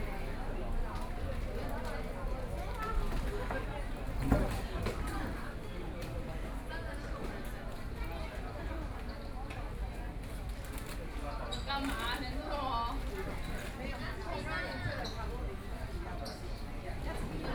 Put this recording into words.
walking in the Agricultural plant, Tourists, Birdsong, Traffic Sound